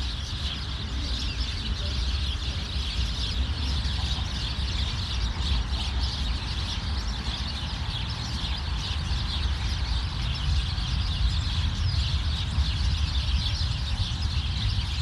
Chattering sparrows, busy station, Bornholmer Str., Bösebrücke, Berlin, Germany - Chattering sparrows, busy station
Deutschland